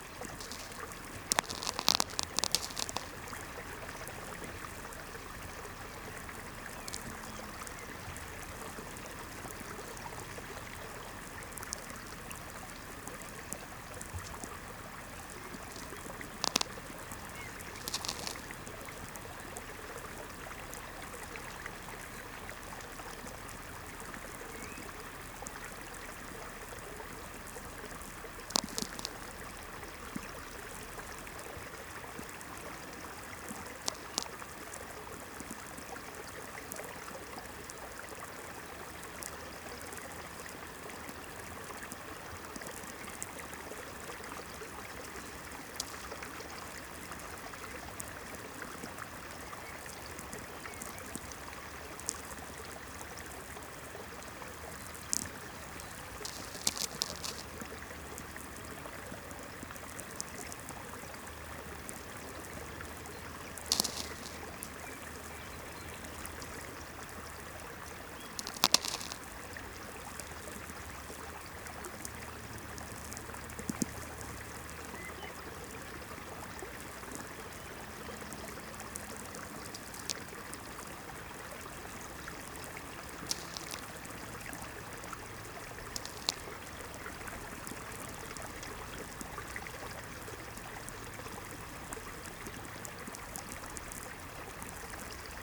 Vilkabrukiai, Lithuania, soundscape with VLF
Standing in the middle of the road with VLF receiver. distant streamlet, car passing by and distant lightnings cracklings on VLF...